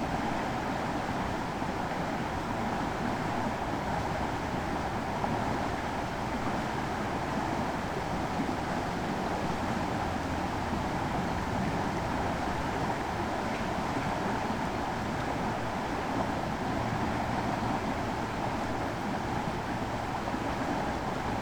burg/wupper: ufer der wupper - the city, the country & me: alongside the wupper river
the city, the country & me: october 15, 2011